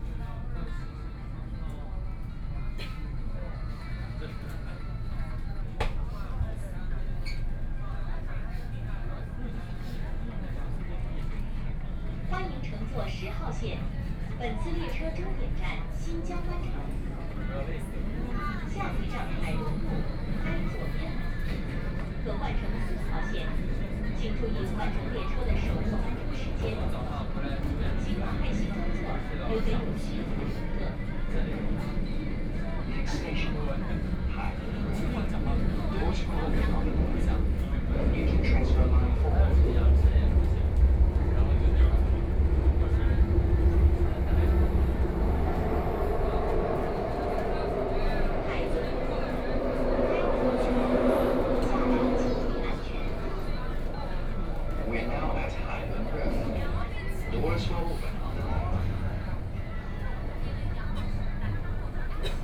Shanghai, China - Line 10 (Shanghai Metro)
from East Nanjing Road Station to Youdian Xincun Station, Binaural recording, Zoom H6+ Soundman OKM II
23 November 2013, 19:01